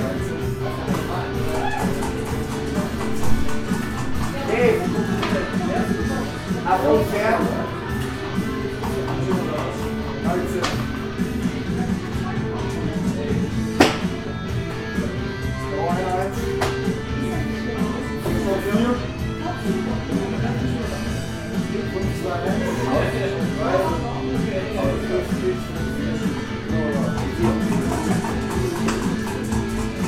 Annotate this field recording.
panoptikum, gerlingplatz 4, 45127 essen